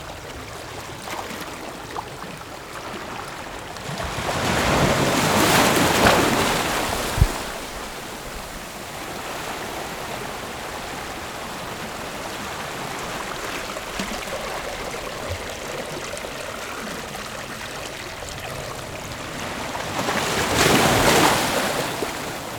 石門區, New Taipei City - The sound of the waves
New Taipei City, Taiwan, June 2012